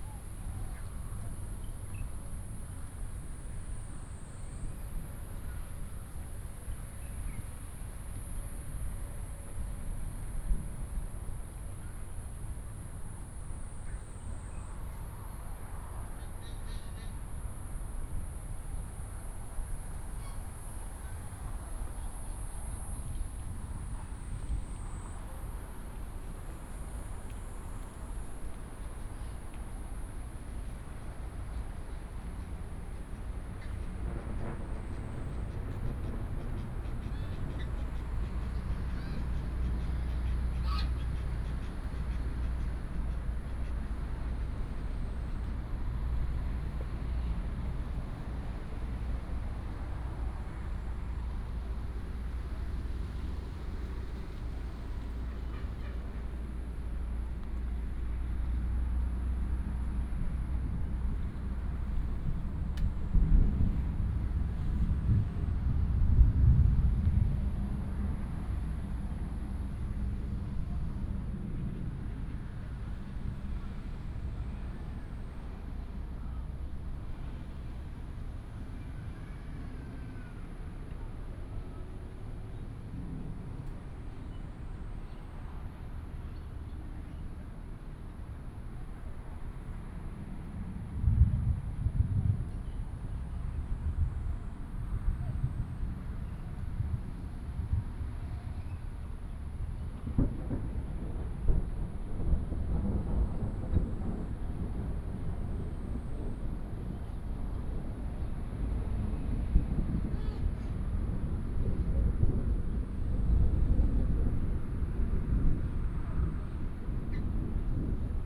in the Park, Thunder, Traffic sound, ducks